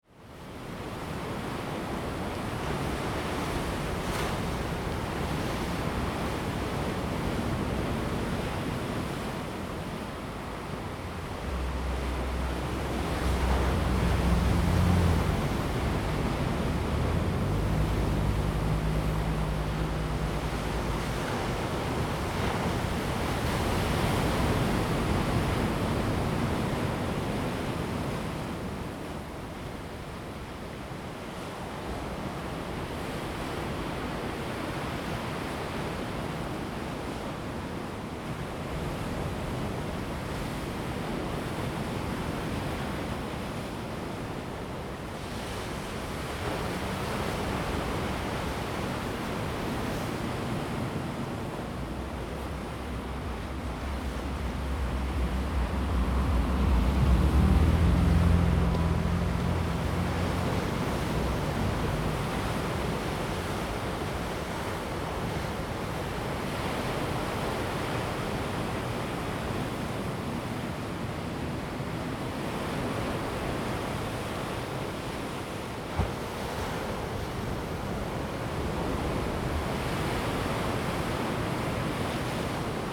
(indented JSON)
{
  "title": "台26線, Manzhou Township, Pingtung County - the sea washes the shore",
  "date": "2018-04-23 11:36:00",
  "description": "On the coast, wind, Sound of the waves, the sea washes the shore\nZoom H2n MS+XY",
  "latitude": "22.19",
  "longitude": "120.89",
  "altitude": "5",
  "timezone": "Asia/Taipei"
}